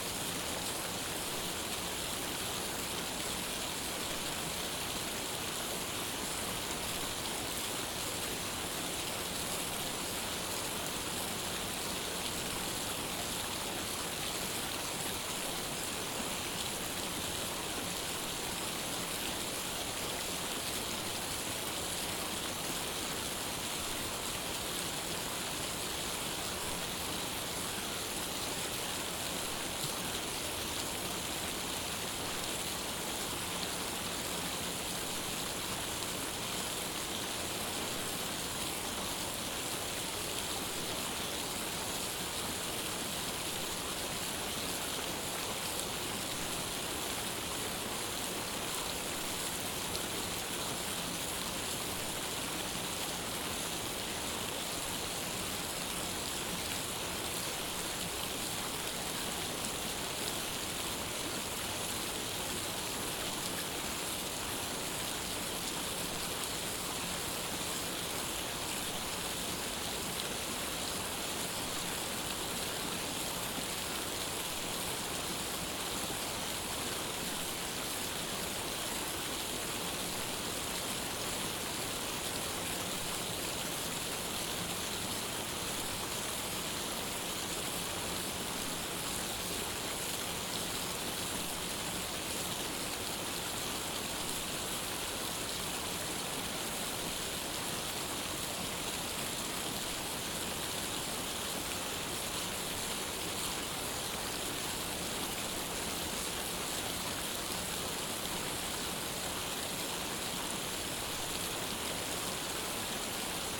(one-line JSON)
{"title": "The College of New Jersey, Pennington Road, Ewing Township, NJ, USA - Science Complex Water Fountain", "date": "2014-09-30 09:45:00", "description": "The fountain was dyed pink for breast cancer awareness", "latitude": "40.27", "longitude": "-74.78", "altitude": "44", "timezone": "America/New_York"}